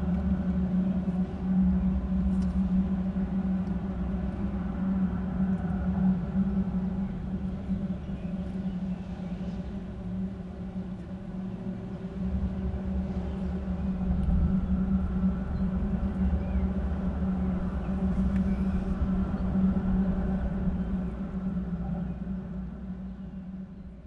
under the Koroški bridge, Maribor, Slovenia - bridge support wind pipe
a pipe through ones of the concrete supports of the koroški (corinthian) bridge, presumably there to diminish wind resistance.
16 June, 14:53